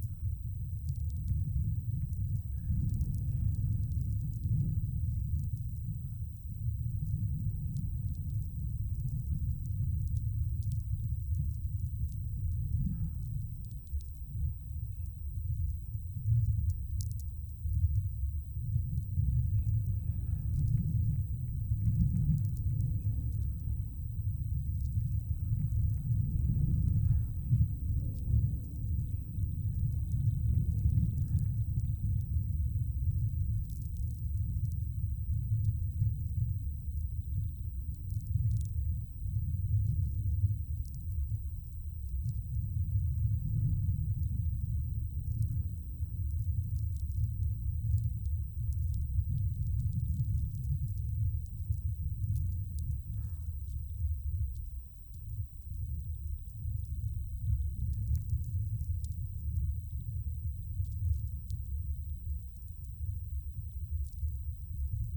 Utena, Lithuania, hangar and vlf
small local aeroport. contact microphones on hangar door holder and electromagnetic antenna in the air